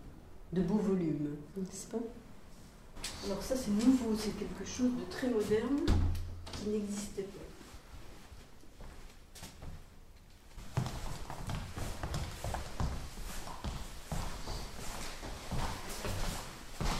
Agnès/ Travexin, France - Agnès
Agnès revient dans l'ancienne école, lieu de son enfance.
Dans le cadre de l’appel à projet culturel du Parc naturel régional des Ballons des Vosges “Mon village et l’artiste”